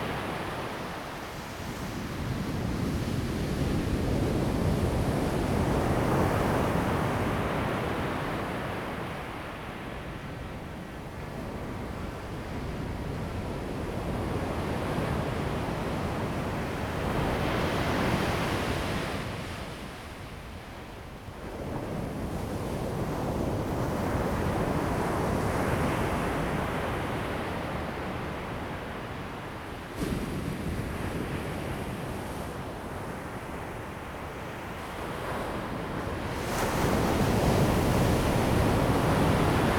泰和海岸, 太麻里鄉台東縣 - sound of the waves
At the beach, Low tide low sea level
Zoom H2n MS+XY